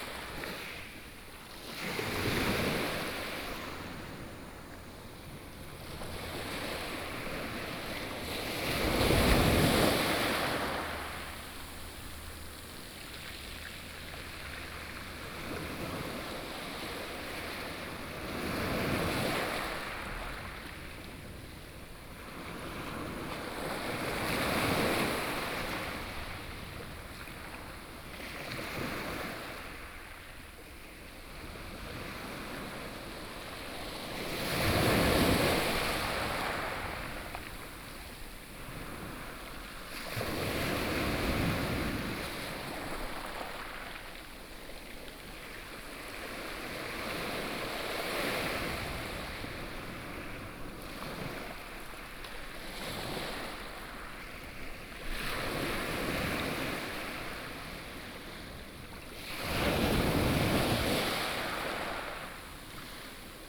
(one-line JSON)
{"title": "新社村, Fengbin Township - In a small port", "date": "2014-08-28 16:18:00", "description": "In a small port, Sound of the waves, Very hot days", "latitude": "23.66", "longitude": "121.54", "altitude": "7", "timezone": "Asia/Taipei"}